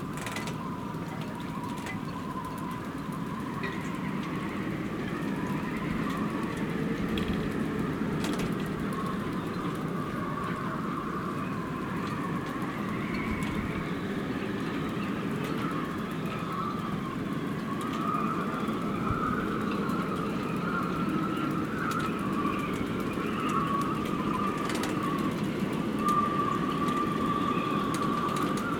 Ved Fjorden, Struer, Dänemark - singing ropes marina Struer
The singing of the ropes of the sailboats in the marina of Struer in the strong wind
iPhone 11 ambeo binaural sennheiser